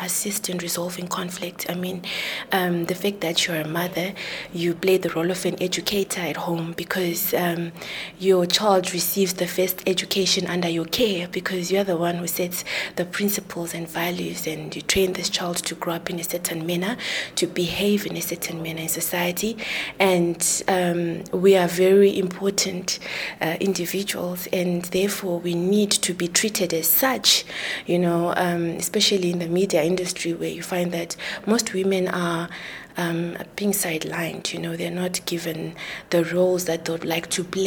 floor Pioneer House, Bulawayo, Zimbabwe - inside Radio Dialogue Studios
Rosie Ndebele, the station's youths coordinator talks about her work with young people, and the important role women play in society…